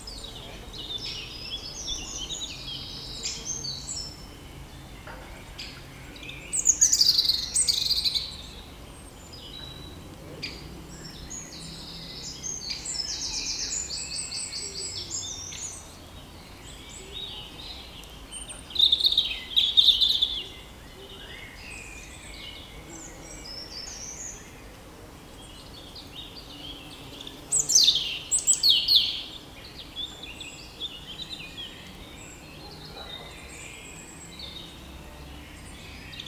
Recording of the birds into the urban woods of Belgium. The reality is that there's no real forest in Belgium, it's only trees, grass, leaves : in a nutshell, these objects scattered in an extremely urbanized landfield. Because of this pressure, pollution is considerable : the cars, the trains, but also and especially the intolerable airplanes. It's interesting to record the Belgian forest, as a sonic testimony of aggression on the natural environment. This explains why this sound is called "the woods" rather than "the forest".
Birds are the European Robin, the Great Spotted Woodpecker, and the regular chip-chip-chip-chip are very young Great Spotted Woodpecker. At the back, European Green Woodpecker. Also the sometimes "teetooteedoodzzii" are Short-toed Treecreeper.
Lasne, Belgium, 21 May 2017